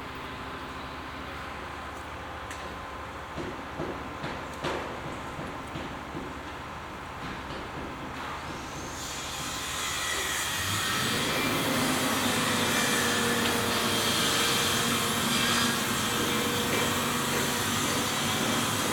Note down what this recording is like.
sound of work at a big street construction for the new vancouver airport subway, soundmap nrw: social ambiences, art places and topographic field recordings